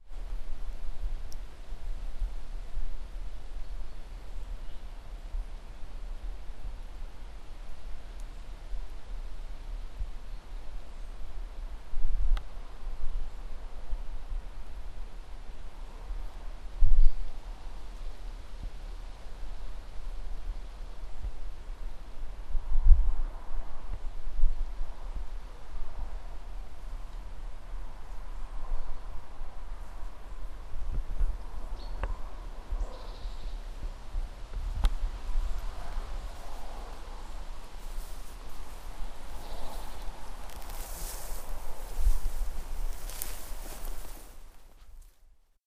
Trehörningsjö, bil - Distant car passing
A car is passing by far in the distant, maybe more than 5-6 km away. This recording is not so very good technically but wanted to include anyway because it makes a good example of that the car traffic is more or less always present even this far out in the woods. Recording made during soundwalk on World Listening Day, 18th july 2010.
Sweden